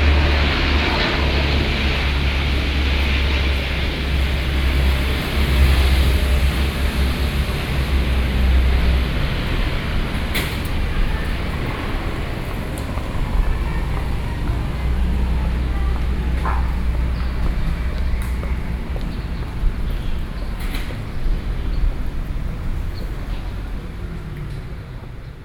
永盛公園, 三重區, New Taipei City - In the park
New Taipei City, Taiwan, 4 November 2012